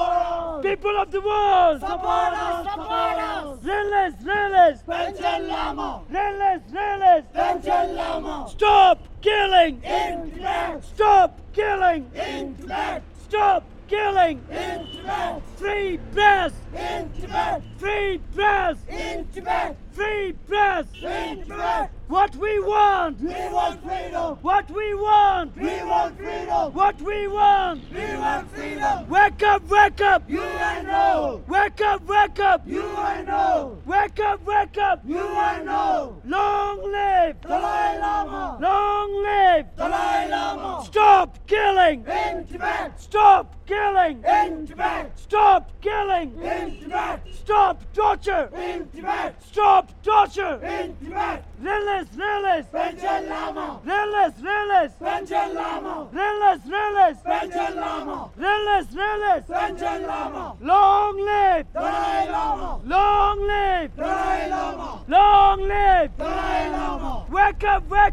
Opposite Moravian Mission Church, Zangsti Rd, Leh - Leh - Ladak - Inde
Leh - Ladak - Inde
Dans l'une des rues principales du centre ville, je croise une manifestation revendiquant le Tibet Libre !" (Free Tibet !)
Fostex FR2 + AudioTechnica AT825